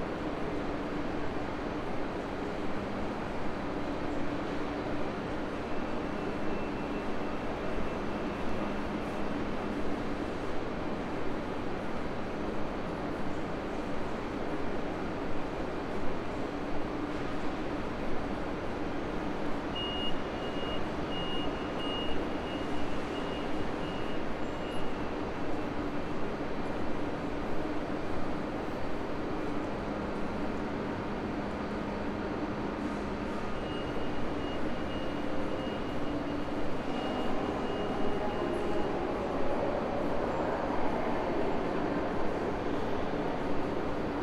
Frankfurt (Main) Hauptbahnhof, Gleis - Gleis 21 Train to Bruessels does not drive
This is the third recording of the 21st of March 2020, the people were already told only to leave the house in urgent cases. Train connections to Amsterdam, Paris and Brussels were interrupted because of the spreading of the corona virus. Thus the anouncment is audible that the train at 12:29 is cancelled. The recording is made on the platform where the train should have left on this quiet friday...
Hessen, Deutschland, 21 March, ~12:00